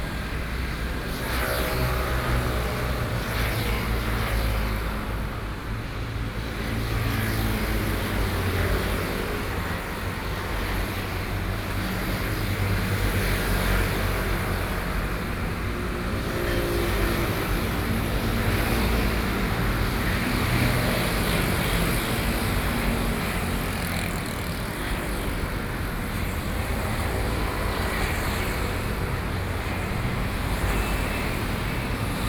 Traffic Noise, Standing on the roadside, Aircraft flying through, Sony PCM D50 + Soundman OKM II
Datong District, Taipei City, Taiwan